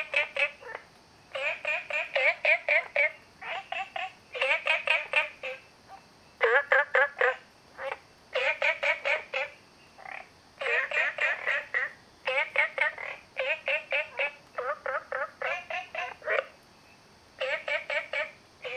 {
  "title": "綠屋民宿, 桃米里 Taiwan - Small ecological pool",
  "date": "2015-09-02 23:19:00",
  "description": "Frogs sound, Small ecological pool\nZoom H2n MS+ XY",
  "latitude": "23.94",
  "longitude": "120.92",
  "altitude": "495",
  "timezone": "Asia/Taipei"
}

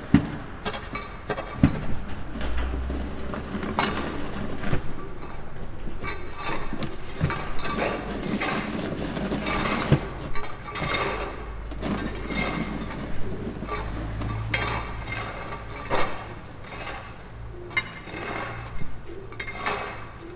{
  "title": "Breaking of the snow and ice",
  "date": "2010-01-10 12:27:00",
  "description": "Ive recorded three workers breaking stiffed snow and ice in the walkway. You can here trams from afar and purr of pigeons. Cars almost don drive. Yesterday I had a walk in Karlov neighbourhood and below the Vyšehrad. The snow calamity caused, that there are almost not cars in the streets. And so the town got quiet beautifully and we can walk in the middle of the streets like the kings.",
  "latitude": "50.07",
  "longitude": "14.41",
  "altitude": "198",
  "timezone": "Europe/Prague"
}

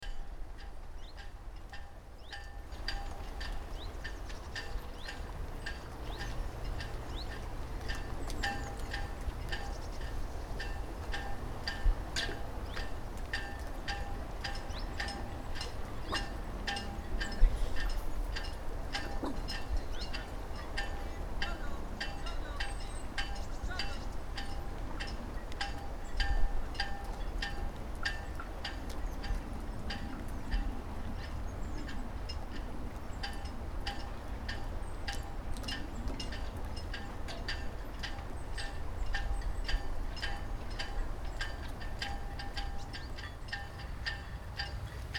{
  "title": "small marina Rohel, Oldeouwer, Nederland - wind and boats",
  "date": "2012-05-12 11:34:00",
  "description": "small marina at the shore oif Lake Tjeuke, largest lake in Fryslan (except Ijsselmeer ofcourse), where the wind is blowing through the cables. Recorded with Zoom4",
  "latitude": "52.91",
  "longitude": "5.81",
  "timezone": "Europe/Amsterdam"
}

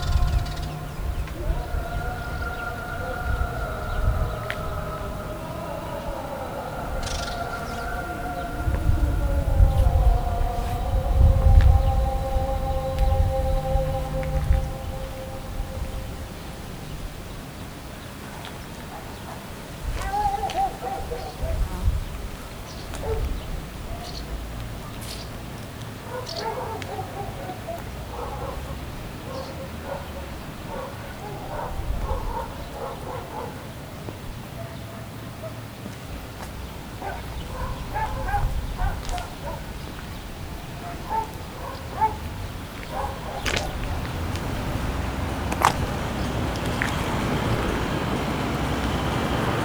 {
  "title": "Lenina St., Bahkchsysaray, Crimea, Ukraine - Streetlife. until the muezzin calls.",
  "date": "2015-07-17 13:00:00",
  "description": "Walking along the mainstreet around midday with my friend and the zoom-recorder. There is traffic, there is the rinse, we pass the famous Hun-palace on Lenina street, tourists check out the 5 stall-market, kids play the birdwhistle, a barbecue-kafe does a soundcheck next to a busy bus stop, pushkin and the street dogs greet from a memorial, one out of a 100 russian flags dance in the mild wind, a single aeroplane passes the sanctioned sky until the muezzin of the mosque starts one of his last calls before the evening celebrations of Orazabayram.",
  "latitude": "44.75",
  "longitude": "33.88",
  "altitude": "205",
  "timezone": "Europe/Simferopol"
}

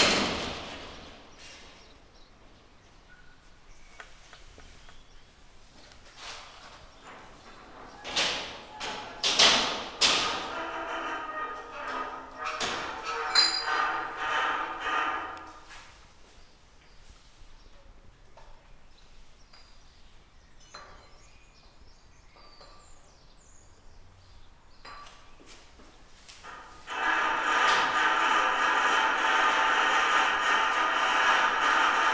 {
  "title": "Braunschweig, Gewächshaus Mittelweg, Öffnen der Seitenwände",
  "latitude": "52.28",
  "longitude": "10.53",
  "altitude": "74",
  "timezone": "Europe/Berlin"
}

